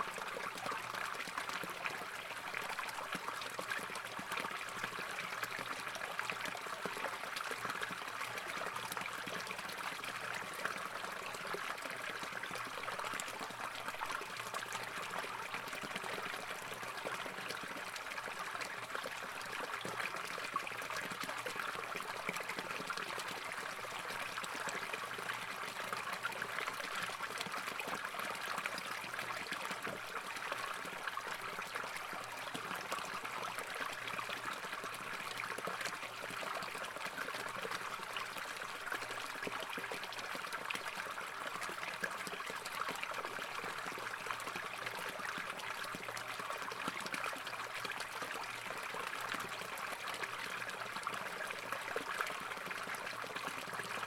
Plätchern der Quelle am Freidrichsbrunnen
Landkreis Bad Dürkheim, Rheinland-Pfalz, Deutschland, April 2020